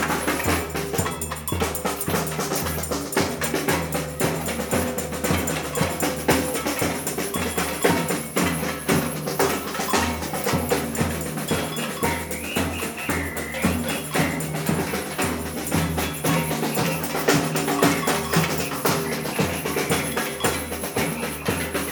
Maintenon, France - Outdoor candles parade
Children walking in the streets, during an outdoor-candles parade. Children are very proud and happy ! Sorry for the span, I didn't think about it.
13 July 2018, 23:00